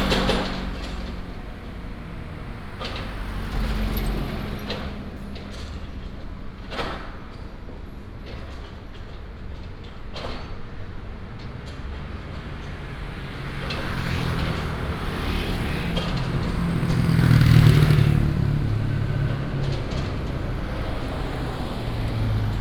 Site construction sound, Traffic sound, Excavator, Binaural recordings, Sony PCM D100+ Soundman OKM II